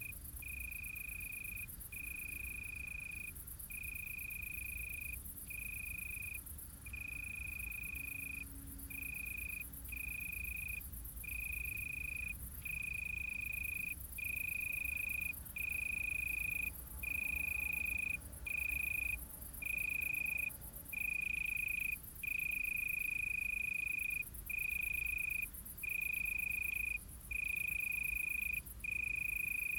Solesmeser Str., Bad Berka, Deutschland - Suburban Germany: Crickets of Summer Nights 2022-No.3
Documenting acoustic phenomena of summer nights in Germany in the year 2022.
*Binaural. Headphones recommended for spatial immersion.